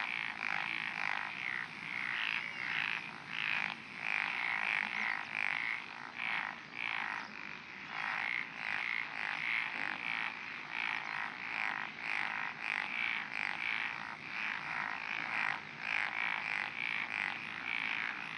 Japan, Shiga, Otsu, Sakamoto, レオパレス２１ - 202006221842 Frogs in a Rice Paddy by a Road
Title: 202006221842 Frogs in a Rice Paddy by a Road
Date: 202006221842
Recorder: Sound Devices MixPre-6 mk1
Microphone: Davinci Head mk2
Technique: Binaural Stereo
Location: Sakamoto, Shiga, Japan
GPS: 35.075152, 135.871114
Content: binaural, head, hrtf, frog, road, wind, rice, field, paddy, japan, old man, children, cars, traffic, ambiance, 2020, summer, sakakmoto, shiga, kansai